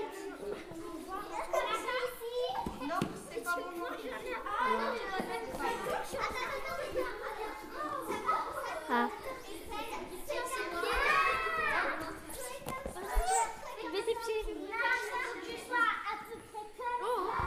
Schiltigheim, France - During the sport lesson
With a group of children inside the gym class.
3 November 2016, ~17:00